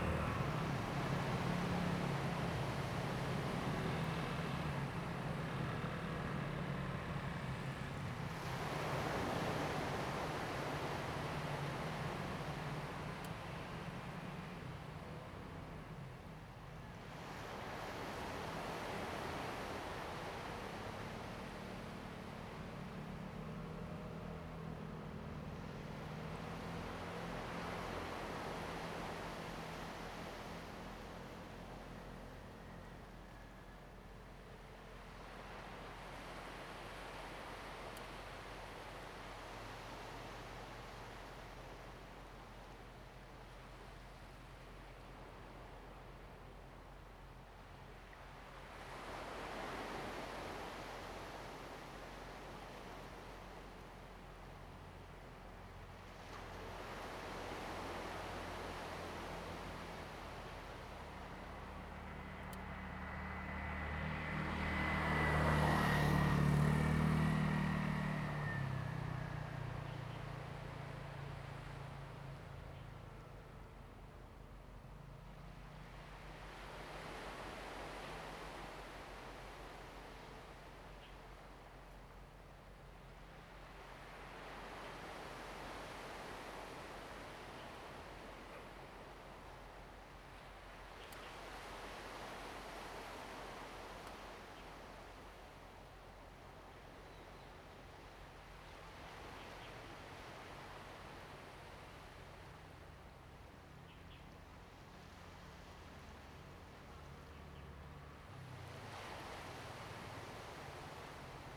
Sound of the waves, Traffic Sound
Zoom H2n MS+XY
Pingtung County, Taiwan, 2 November, 08:55